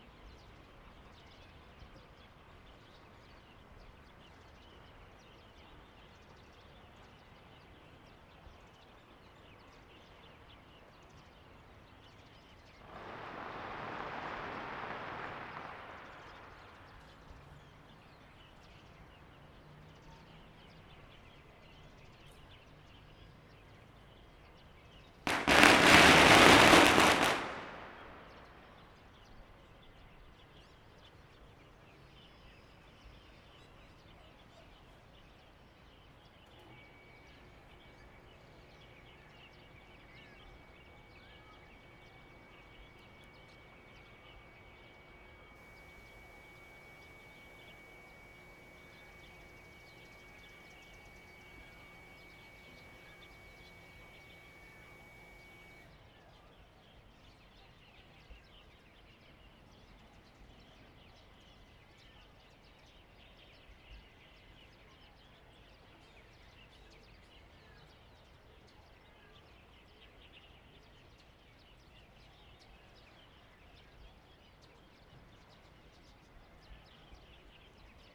雲林縣水林鄉蕃薯村 - Penthouse platform
On the Penthouse platform, Neighbor's voice, Birdsong sound, Chicken sounds, The sound of firecrackers, Motorcycle sound, Zoom H6 M/S